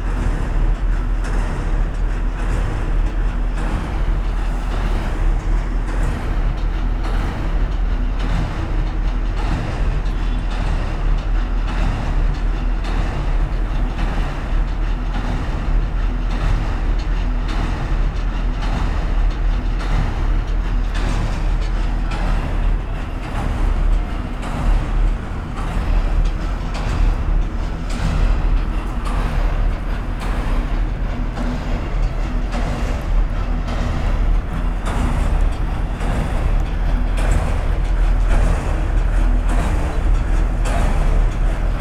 equipment used: M-Audio MicroTrack II
Pile driver's constant thundering rhythm at construction site at Boul. De Maisonneuve & Rue Metcalfe